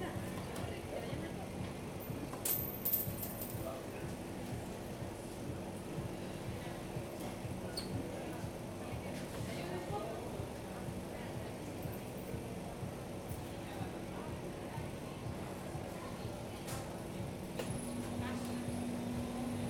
{
  "title": "Benalmádena, Prowincja Malaga, Hiszpania - Benalmadena Ice",
  "date": "2014-10-17 16:57:00",
  "description": "Women chatting at a nearby ice cream stand. You can hear the fridge humming. Recorded with Zoom H2n.",
  "latitude": "36.60",
  "longitude": "-4.51",
  "altitude": "3",
  "timezone": "Europe/Madrid"
}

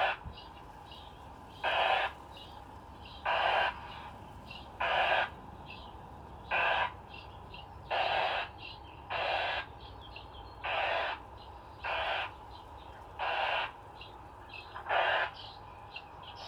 {
  "title": "Kings, Subd. D, NS, Canada - Vineyard loudspeakers playing bird predetor and distress calls",
  "date": "2015-10-15 17:56:00",
  "description": "Recorded bird calls to scare real birds away from the vines. Nova Scotian wine has a fast growing reputation and sound is used, alongside nets, to protect the grapes from hungry beaks. The sequences of predator and distress calls are played automatically every 10 minutes or so. The sound quality is truly poor. I'm surprised that any real birds are fooled.",
  "latitude": "45.10",
  "longitude": "-64.31",
  "altitude": "37",
  "timezone": "America/Halifax"
}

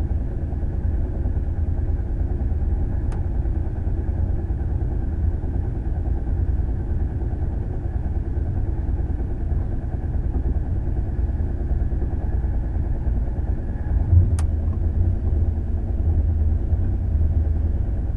{
  "title": "Friedland, Germany - Night train Hamburg Munich (in couchette)",
  "date": "2018-02-10 23:20:00",
  "description": "In couchette, noise from moving furniture\nCapturé de la couchette du train de nuit",
  "latitude": "51.39",
  "longitude": "9.93",
  "altitude": "845",
  "timezone": "GMT+1"
}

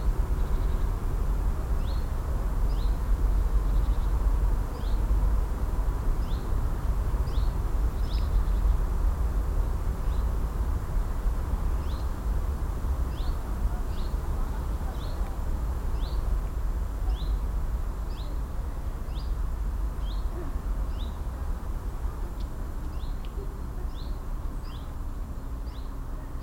{
  "title": "refrath, siegenstrasse, bahnübergang",
  "description": "morgens am bahnübergang, passanten, schulkinder, vorbeifahrt von zwei bahnen\nsoundmap nrw: social ambiences/ listen to the people - in & outdoor nearfield recordings",
  "latitude": "50.95",
  "longitude": "7.11",
  "altitude": "72",
  "timezone": "GMT+1"
}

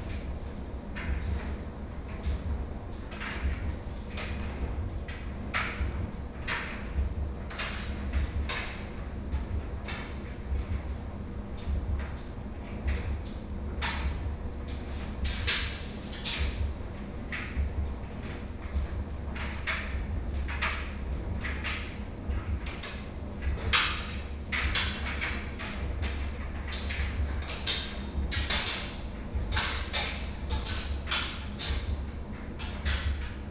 {
  "title": "城市商旅-高雄駁二館, Kaohsiung City - Dryer",
  "date": "2018-04-24 16:48:00",
  "description": "Dryer sound\nBinaural recordings\nSony PCM D100+ Soundman OKM II",
  "latitude": "22.62",
  "longitude": "120.29",
  "altitude": "9",
  "timezone": "Asia/Taipei"
}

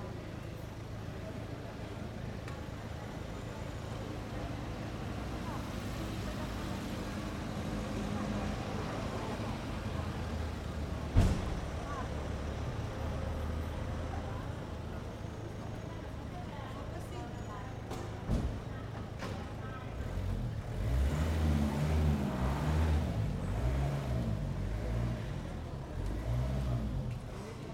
{
  "title": "via San Pietro, Siena Siena, Italien - Siena via San Pietro",
  "date": "2014-10-02 12:45:00",
  "description": "Occasional tourists passing by. A car driver is trying several times to get into the small parking space. The owners of the small shops across the street are talking to each other. Recorded in Mid/Side Technique . With NTG3 as mid and AKG CK94 as figure 8 microphones. The Mics where placed in a Rode Blimp and handheld.",
  "latitude": "43.32",
  "longitude": "11.33",
  "altitude": "352",
  "timezone": "Europe/Rome"
}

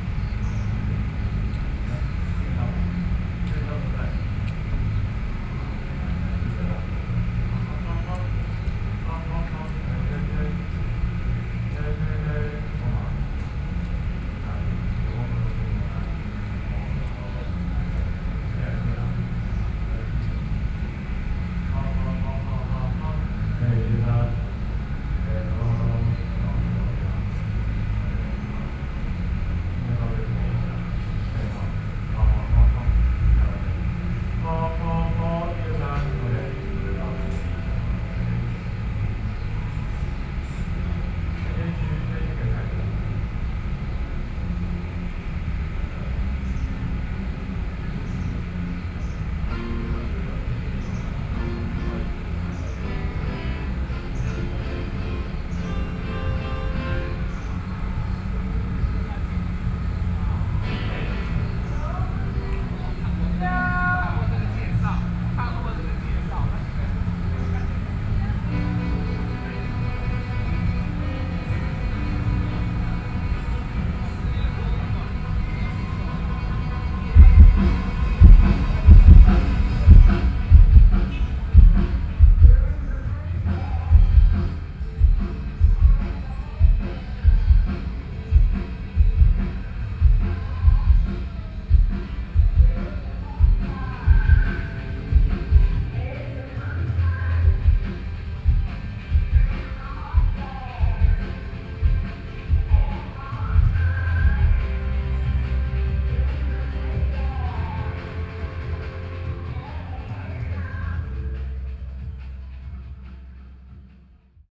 {
  "title": "Huashan 1914 Creative Park - Holiday",
  "date": "2013-08-17 15:57:00",
  "description": "Noise generator, Concert is testing the microphone, Visitor, Sony PCM D50 + Soundman OKM II",
  "latitude": "25.04",
  "longitude": "121.53",
  "altitude": "10",
  "timezone": "Asia/Taipei"
}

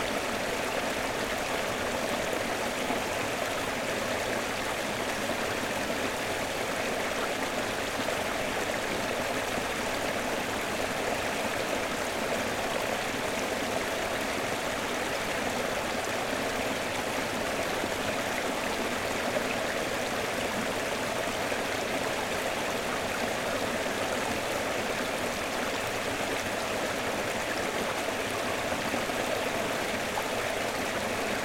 {
  "title": "Deguliai, Lithuania, stream",
  "date": "2022-07-02 19:15:00",
  "description": "litt;e river streaming through rooths and stones",
  "latitude": "55.44",
  "longitude": "25.53",
  "altitude": "137",
  "timezone": "Europe/Vilnius"
}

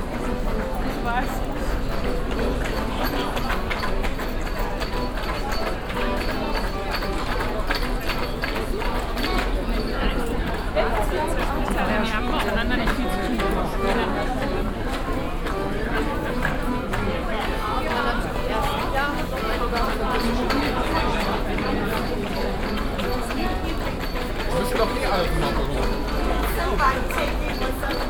{
  "title": "cologne, unter taschenmacher, puppenspieler",
  "date": "2008-12-23 17:39:00",
  "description": "abends inmitten regem altstadt publikum in der engen kopfsteinpflastergasse - ein puppenspieler mit akkordeon\nsoundmap nrw -\nsocial ambiences/ listen to the people - in & outdoor nearfield recordings",
  "latitude": "50.94",
  "longitude": "6.96",
  "altitude": "57",
  "timezone": "Europe/Berlin"
}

